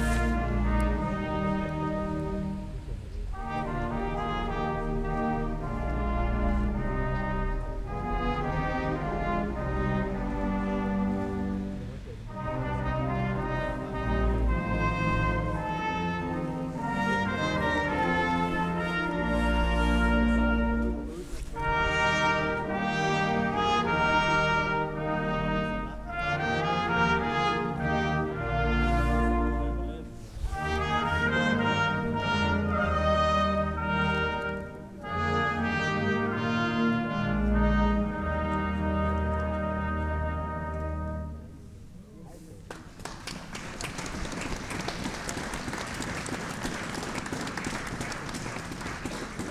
Rathaus, Isny im Allgäu, Weihnachtsblasen
Weihnachtsblasen 2009. Wie im Bilderbuch: Es lag Schnee, und eine recht große Menge Menschen versammelte sich unter dem Rathausbalkon, auf dem eine ca. zehn Mitglieder starke Blaskapelle Weihnachtslieder spielte. Trotz der eisigen Temperaturen bekamen die Musiker einen ganz ordentlichen Sound hin, die Arrangements waren wirklich sehr nett. Manche der anwesenden Zuhörer sangen auch mit – sehr feierlich ...
Baden-Württemberg, Deutschland, European Union, 24 December 2009